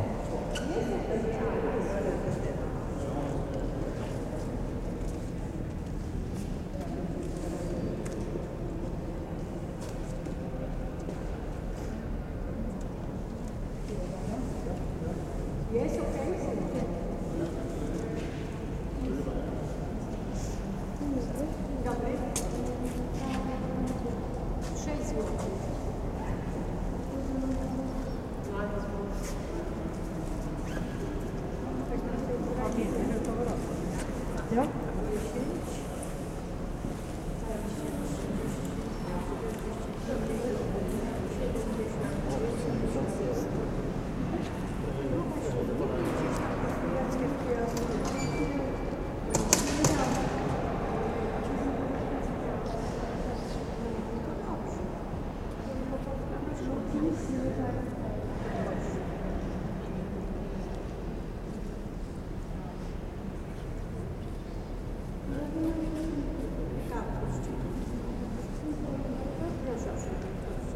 Cathedral ambience Śródmieście, Gdańsk, Poland - Cathedral ambient and voices from ticket office
Voices from ticket office with the ambience of the St John Cathedral.